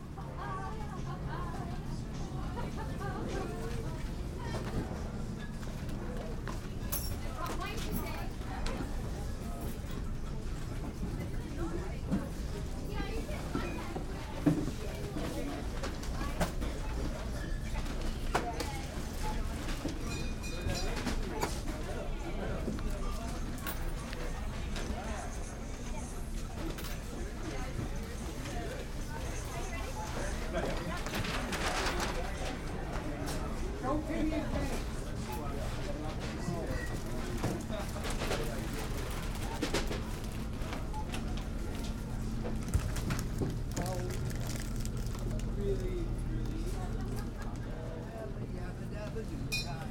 Trader Joe's, Cambridge - Trader Joe's Soundscape
A soundscape recorded using a Sony Digital recorder.